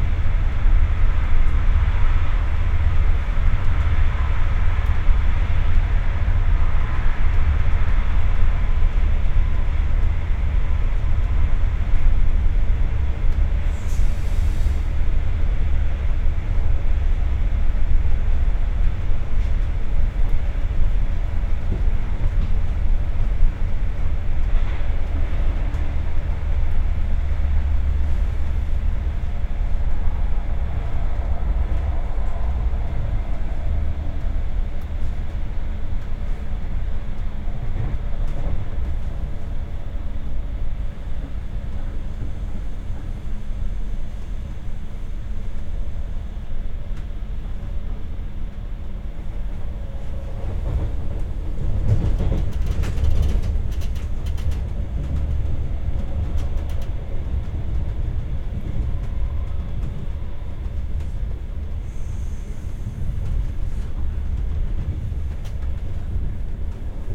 Neumünster, Deutschland - 6 minutes on a train
About 6 minutes of a rather quiet train ride until arriving in Neumünster and the quietness is over. Rumbling, creaking, squeaking, announcement of next stop, doors, new loud passengers.
Zoom H6 recorder x/y capsule
18 December, 10:42